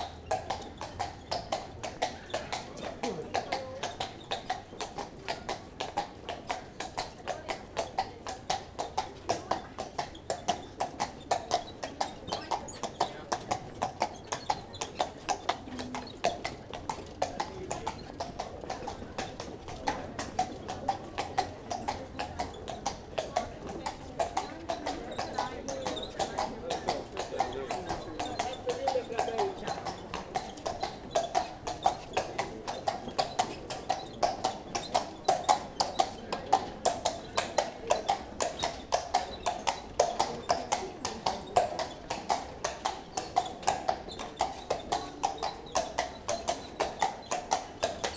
Brugge, België - Horses in the city
Wijngaardplein. Bruges can be visited by horse-drawn carriage. Horses walk tourists for a plump price. The city of Bruges is totally inseparable from the sound of hooves on the cobblestones. Streets in the city center are flooded with these journeys, a real horses ballet, immediately near the Beguinage.
Brugge, Belgium, 16 February 2019